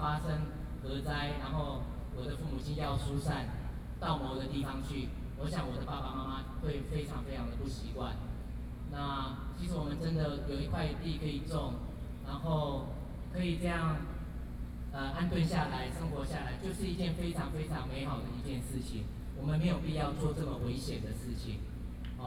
Zhongzheng, Taipei City, Taiwan - No Nuke
Protest, Hakka singer, Zoom H4n+ Soundman OKM II
台北市 (Taipei City), 中華民國, 26 May 2013